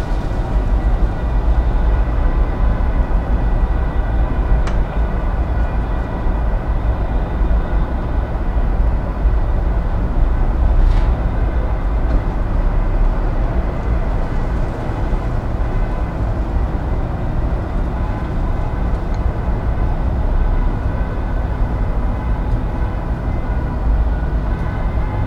Brussels, Rue Capouillet, Balcony inner courtyard. - Brussels, Rue Capouillet, traffic jam
traffic jam for sales or maybe a wedding, not sure.